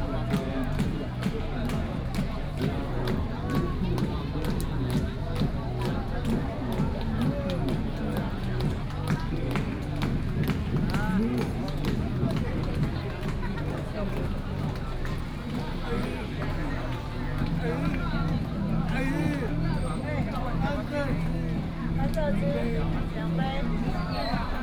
In the temples square, Community residents festivals, Vendors, Children are performing, Binaural recordings, Sony PCM D100+ Soundman OKM II
Taoyuan City, Daxi District, 1 November 2017